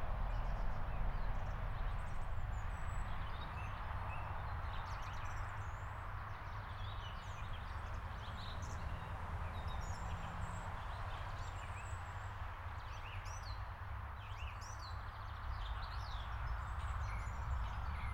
Anija metskond, Paasiku, Harju maakond, Estonia - Forest
A lot of birds.